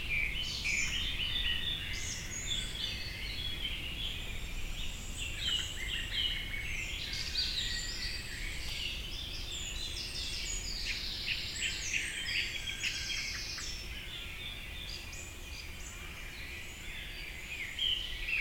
Montagnole, France - An hour with blackbirds waking up
There's nothing more magical than the first bird shout on the morning. In the middle of the night, forest is a wide silent. Slowly dawn arrives, a distant hubbub is heard and the first shout emerges from the forest. Birds intensely sing in the morning in order to celebrate the fact of having survived the night (for this reason blackbirds make many shrill screams during nightfall because of anxiety). A quiet morning allows birds to reaffirm their territory possession, shouting clearly to the others. In this remote path in the forest of Montagnole (Savoy, France), I was immediately seduced by these woods immensely filled with blackbirds shouts. This is why I recorded them rising from 4:30 in the morning to later. Unfortunately the places is drowned in a constant flood of planes vomit sounds, but I had no choice. Early and temporarily exempt by this misery, I can give this recording, awakening with blackbirds.
0:48 - The first shout of the morning.
4:00 - Unleashed dogs.
7 June 2017, 04:40